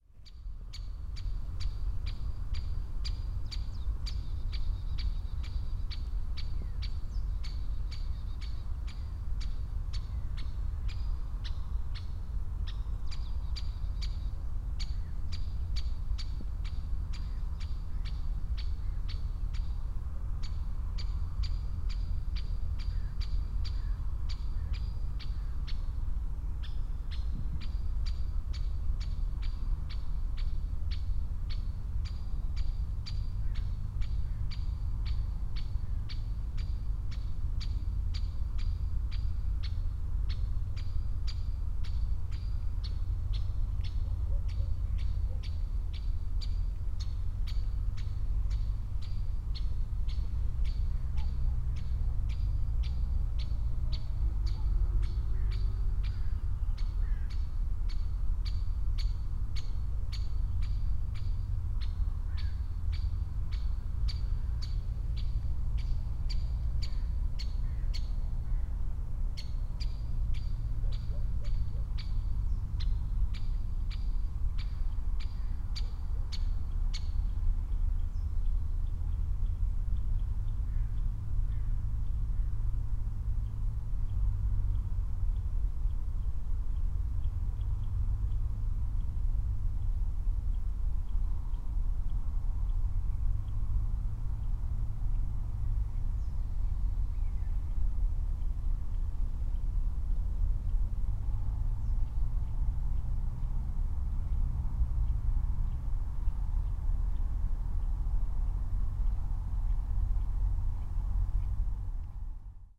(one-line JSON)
{"title": "Šturmovci, Slovenia - bird's solo", "date": "2012-11-15 14:47:00", "description": "bird is singing on top of aspen tree and suddenly stops", "latitude": "46.38", "longitude": "15.93", "altitude": "213", "timezone": "Europe/Ljubljana"}